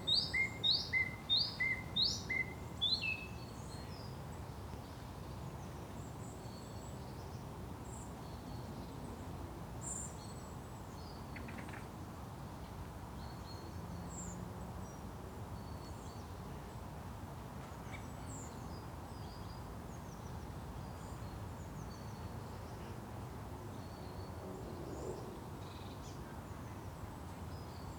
Wentbridge, UK - Wentbridge birdsong
There's an interesting bird song with a bit of variation which stops and starts. You can also hear some distant hunting gunshots, distant traffic, and occasionally dogs and people walking in the wood.
(rec. zoom H4n)